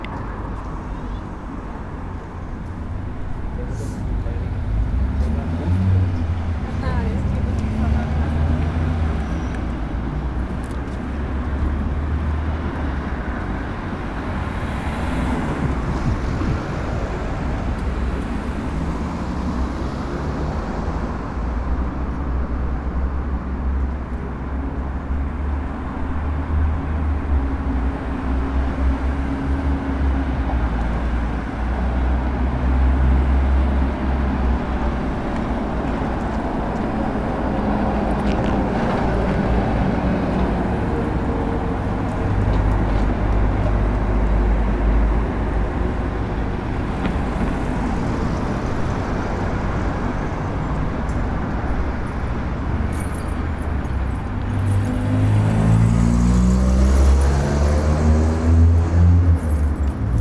one minute for this corner: Partizanska cesta 7
Maribor, Slovenia, 20 August 2012, 18:44